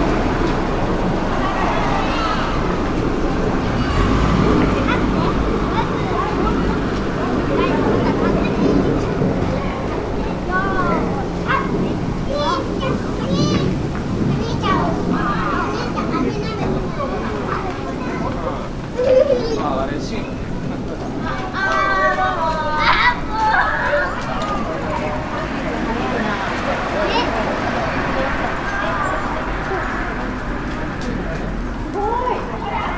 Japan Präfektur ChibaMatsudoShinmatsudo, ７丁目 - Children play in suburban park in Tokyo(Chiba
you can hear the children play in the park and adults playing something like crocket (similar to the actual photo; the persons on the open space in the park; this is where you can hear the crocket sound from);
it was recorded from my balcony at the second floor with a Sony D50;
one problem in this recording is the reflected sound from the next building that is about 3-4m apart and maybe the other building on the left side that is farther away; that is why there is some hall in the sound;